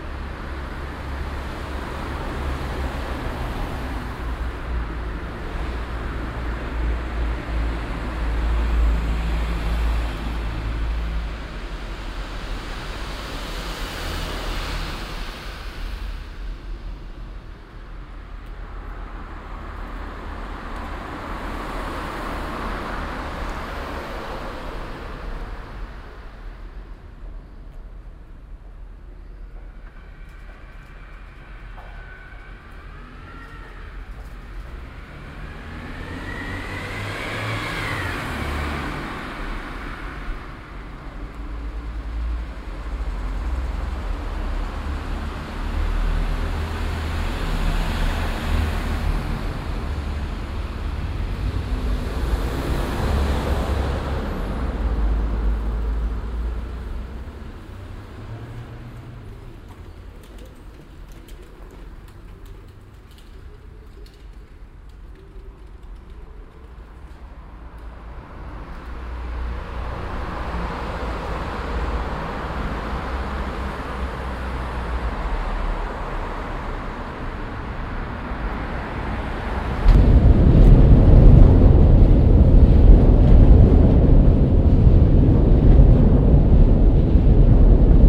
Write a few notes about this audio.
stereofeldaufnahmen im mai 08 - mittags, project: klang raum garten/ sound in public spaces - in & outdoor nearfield recordings